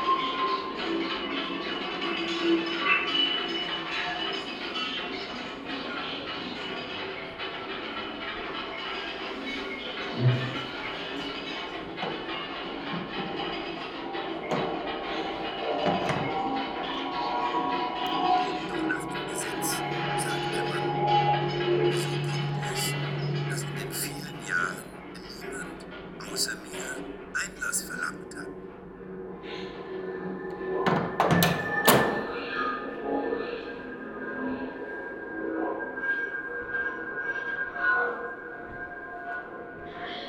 Franz Kafka's Museum, Praha, Czechia - (93e BI) Excerpt from the exhibition
(in that spot on the map - this is what you hear).
Recorded with Soundman OKM + Zoom H2n